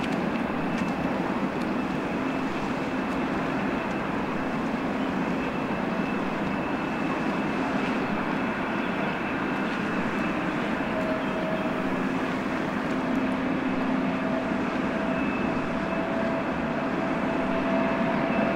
Toulouse, Sept Deniers
Sunday morning with a motor and people talking
Toulouse, France, 17 July 2009, ~10:00